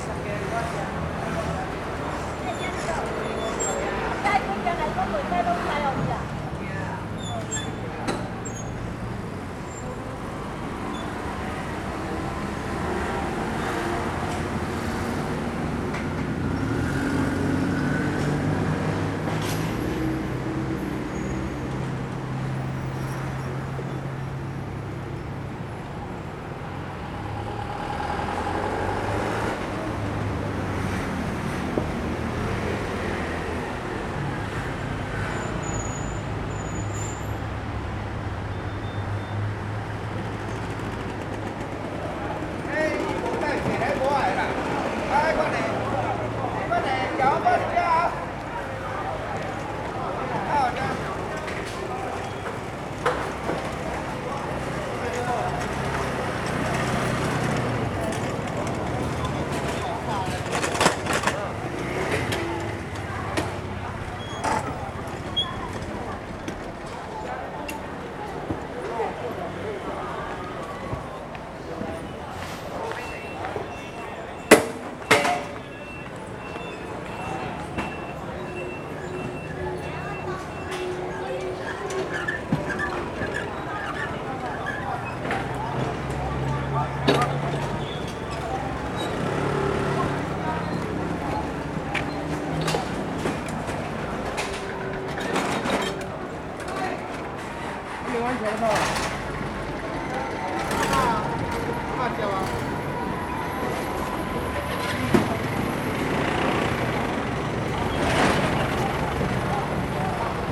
Fruits and vegetables wholesale market
Sony Hi-MD MZ-RH1 +Sony ECM-MS907